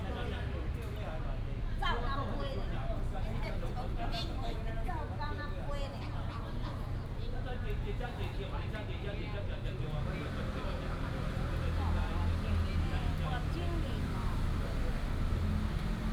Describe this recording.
in the Park, A group of old people chatting